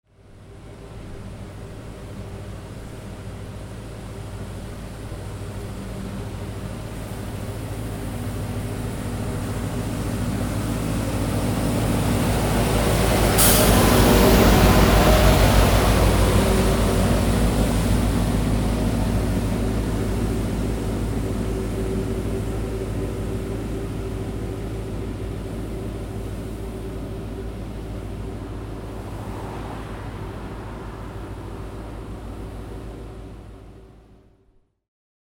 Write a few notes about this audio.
A big street cleaning truck passing by in the night.